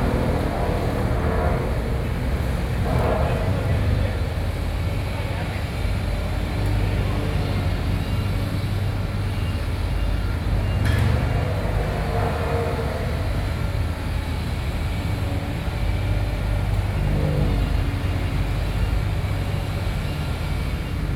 Sec., Xi’an St., Beitou Dist., Taipei City - Construction noise